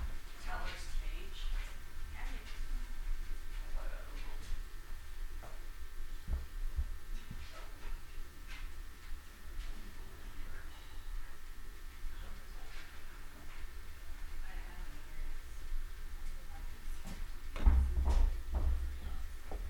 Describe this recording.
Between Schwabacher Building and former Scandinavian-American Bank (Yesler Building). Group heads towards walk-in vault. Standing directly underneath purple glass in sidewalk. "Bill Speidel's Underground Tour" with tour guide Patti A. Stereo mic (Audio-Technica, AT-822), recorded via Sony MD (MZ-NF810).